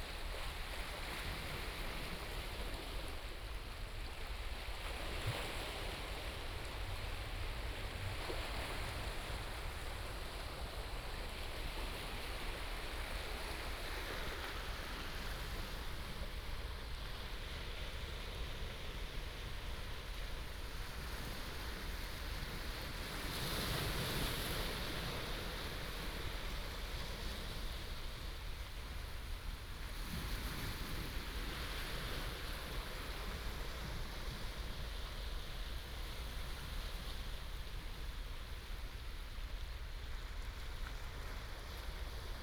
溪口, 淡水區, New Taipei City - the waves
On the coast, The sound of the waves